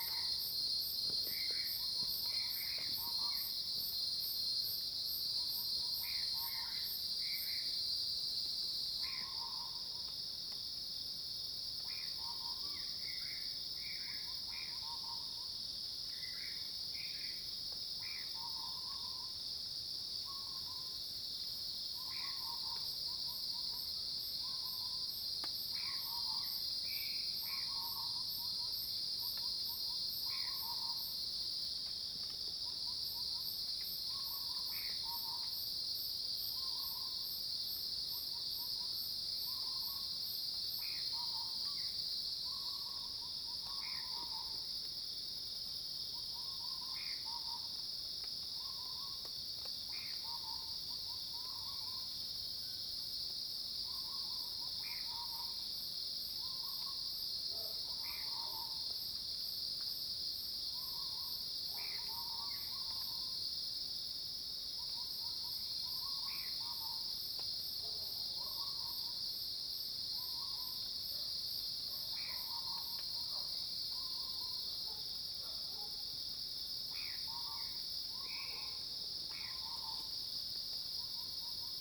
Insects called, Birds call, Cicadas cries, Facing the woods
Zoom H2n MS+XY
Puli Township, 華龍巷164號, 19 September 2016, 06:18